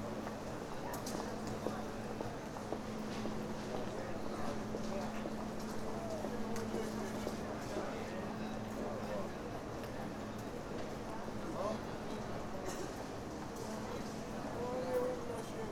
Sants Estació interior
Inside the hall of the train station. Lots of people uses this station for regional, national and international journeys everyday.